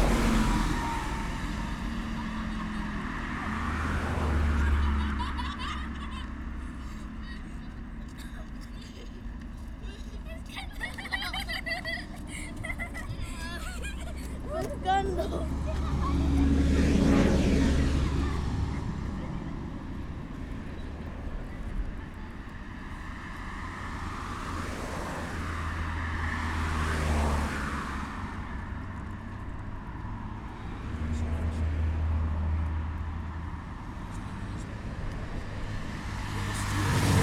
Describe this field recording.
passers by and traffic over the river Manzanares.